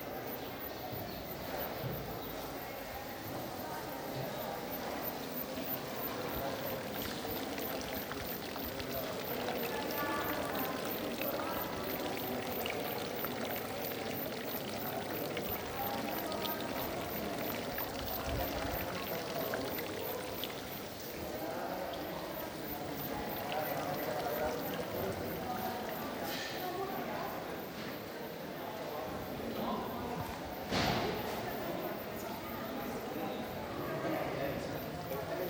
Cathedral garden, Barcelona, Spain - Goose Weather
Geese, voices, water and an electronic "mosquito". Recorded the day that the war on Iraq began.